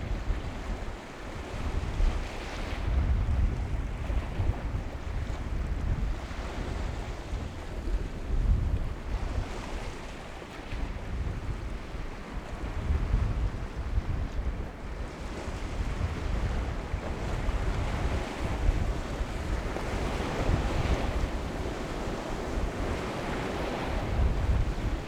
on the windy side of the peninsula
the city, the country & me: october 3, 2010
Middelhagen, Deutschland, 3 October